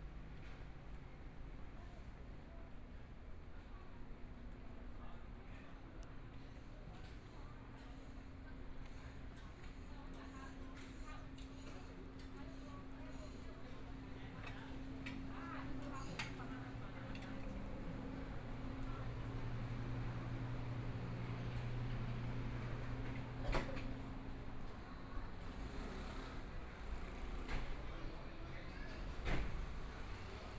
聚葉里, Zhongshan District - In the Street
Walking through the small streets, Binaural recordings, Zoom H4n+ Soundman OKM II
Taipei City, Taiwan